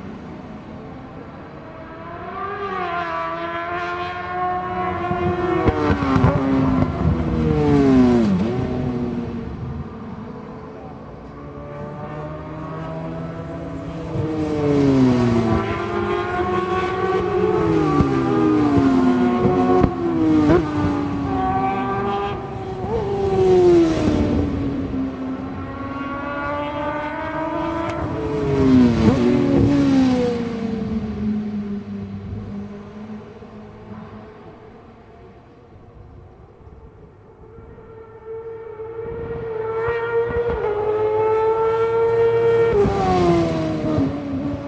West Kingsdown, UK - World Superbikes 2002 ... Sidecar Qual ...
World Superbikes ... Sidecar Qual ... one point stereo to minidisk ... date correct ... time possibly not ...